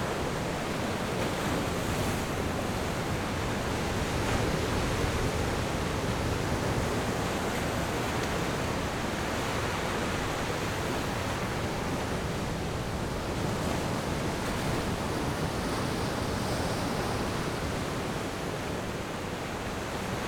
東清灣, Koto island - Sound of the waves
Sound of the waves
Zoom H6 +Rode NT4
29 October 2014, 14:02